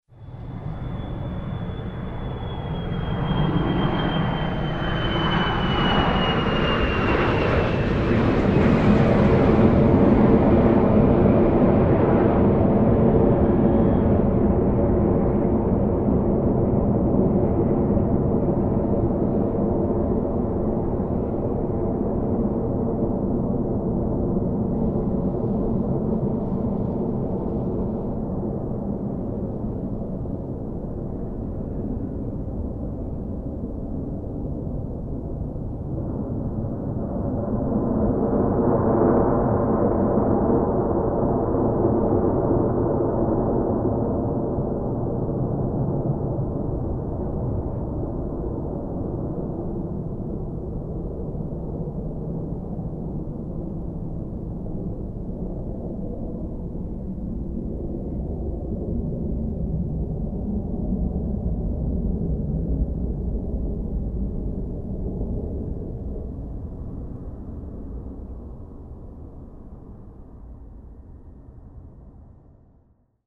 Düsseldorf, Airport, starting field
At the airport close to the starting field. Tghe sound of the starting and lift off of a plane in the afternoon.
soundmap nrw - social ambiences and topographic field recordings